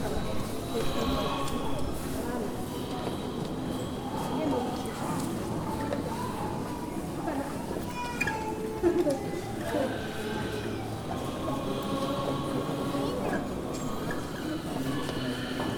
{
  "title": "Гандан тэгчинлин хийд - Gandantegchinlin monastery - Ulan Bator - Mongolia - inside - prayer wheels",
  "date": "2014-11-08 15:10:00",
  "description": "inside the temple - prayer wheels continuously turning",
  "latitude": "47.92",
  "longitude": "106.89",
  "altitude": "1328",
  "timezone": "Asia/Ulaanbaatar"
}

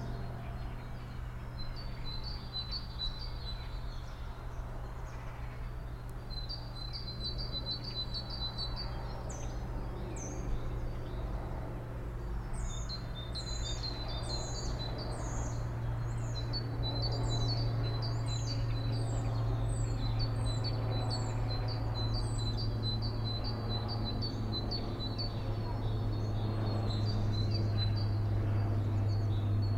{
  "title": "Martha-Stein-Weg, Bad Berka, Deutschland - A Feint Sign Of Spring Germany: Woodpecker in Space",
  "date": "2021-02-20 13:15:00",
  "description": "Binaural recording of a feint sign of Spring 2021 in a Park in Germany. A Woodpecker can be heard in the right channel. There is a perception of height with the subtle calls of other birds. Date: 20.02.2021.\nRecording technology: BEN- Binaural Encoding Node built with LOM MikroUsi Pro (XLR version) and Zoom F4.",
  "latitude": "50.90",
  "longitude": "11.29",
  "altitude": "276",
  "timezone": "Europe/Berlin"
}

